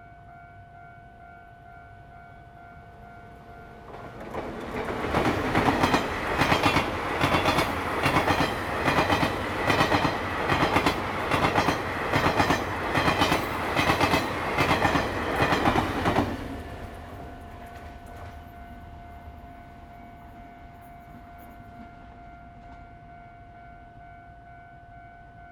The train runs through, traffic sound
Zoom h2n MS+XY